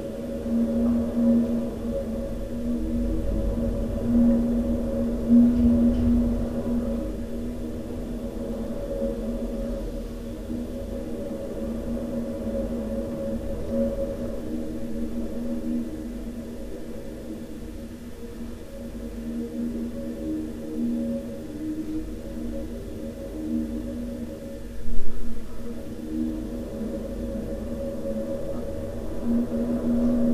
selva, calle de ses escoles, wind in the cellar
morning wind in the cellar of a house
soundmap international: social ambiences/ listen to the people in & outdoor topographic field recordings